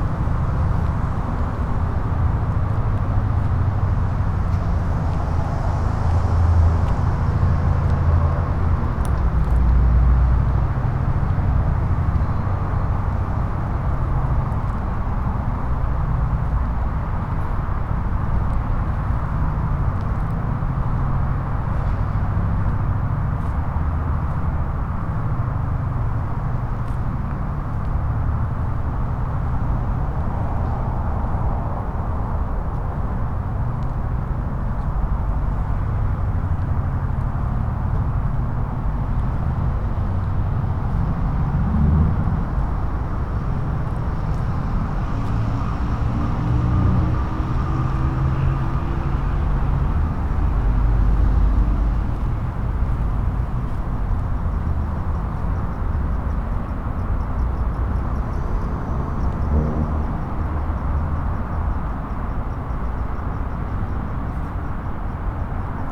Berlioz, León Moderno, León, Gto., Mexico - Caminando por el Parque de la Mona.
Walking by Parque de la Mona.
I made this recording on december 13th, 2021, at 6:44 p.m.
I used a Tascam DR-05X with its built-in microphones and a Tascam WS-11 windshield.
Original Recording:
Type: Stereo
Esta grabación la hice el 13 de diciembre de 2021 a las 18:44 horas.
Guanajuato, México, 13 December 2021